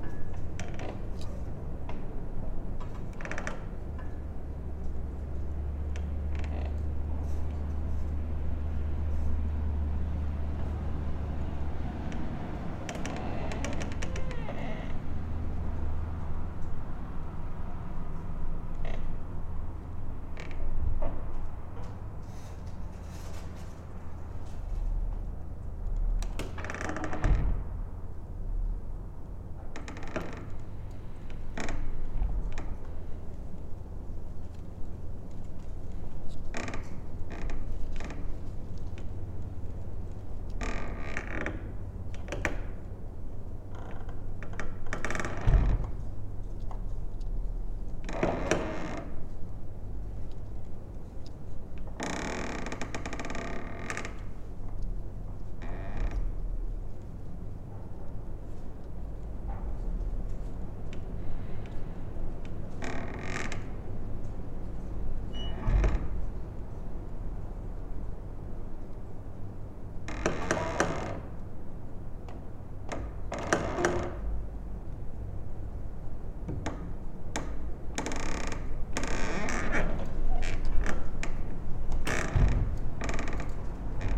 Antalgė, Lithuania, abandoned school entrance door
abandoned school: entrance door swaying in the wind
Utenos apskritis, Lietuva